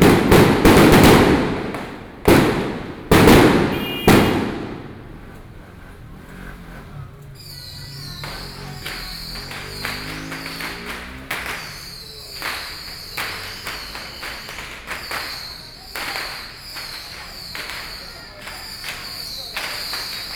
Sec., Hankou St., Taipei City - Traditional temple festivals
Firework, Traditional temple festivals, Traditional musical instruments, Binaural recordings, Sony PCM D50 + Soundman OKM II, ( Sound and Taiwan - Taiwan SoundMap project / SoundMap20121115-11 )
Wanhua District, 漢口街二段96號, 15 November, 12:30